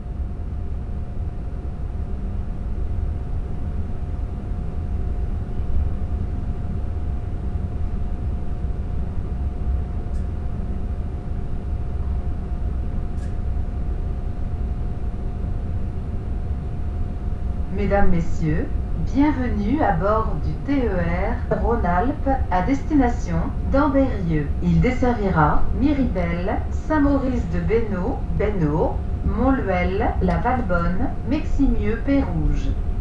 Saint-Maurice de Beynost, Express Regional Train.
Le TER Lyon-Ambérieu vers Saint-Maurice de Beynost.
Miribel, France, 11 November 2010, ~11:00